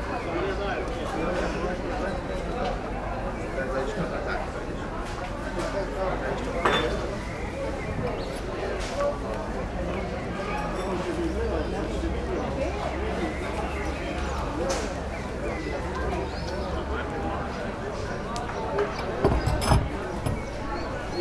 Maribor, Vodnikov Trg, market - cafe Branjevka
sunday market, white tables, blue chairs, coffee cup from Yugoslavia times, pigeons ... bistro Branjevka is one of few old style cafes in town, women carries coffee also to the vegetables and fruit sellers, some of them farmers, most of them re-sellers ...